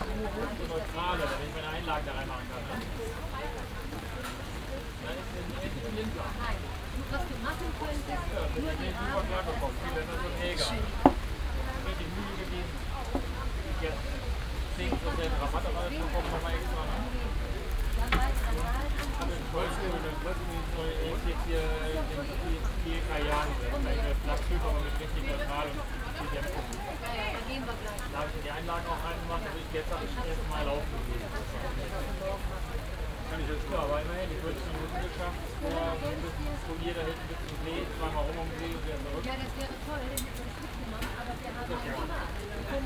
refrath, markplatz, wochenmarkt, stand pütz
morgens im regen unter schirmen, einkäufe und mobilgespräche
soundmap nrw:
social ambiences/ listen to the people - in & outdoor nearfield recordings